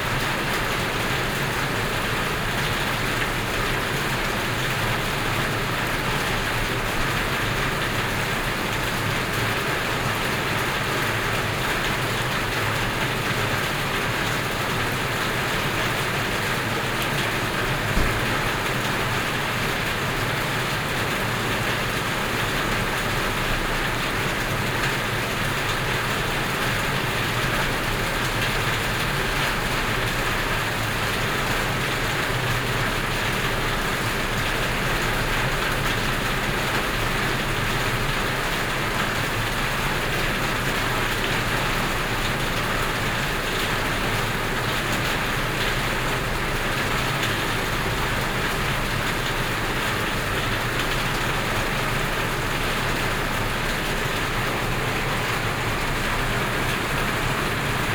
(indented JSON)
{
  "title": "Luodong Station, Taiwan - Heavy rain",
  "date": "2013-11-07 08:47:00",
  "description": "Morning at the station entrance, Binaural recordings, Zoom H4n+ Soundman OKM II",
  "latitude": "24.68",
  "longitude": "121.77",
  "altitude": "11",
  "timezone": "Asia/Taipei"
}